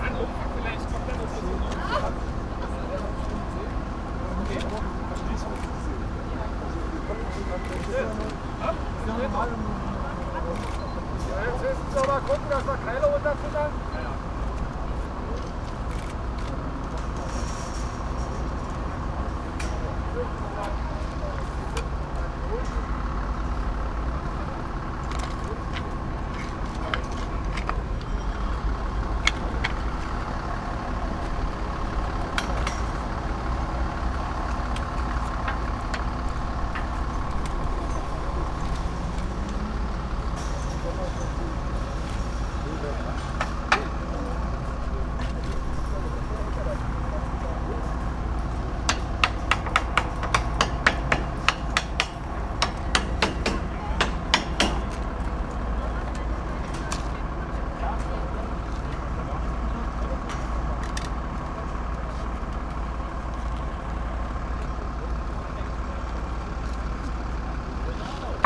Berlin, Marx-Engels-Forum - intermediate stop
crane moves Engels statue, workers securing the process, journalists taking photos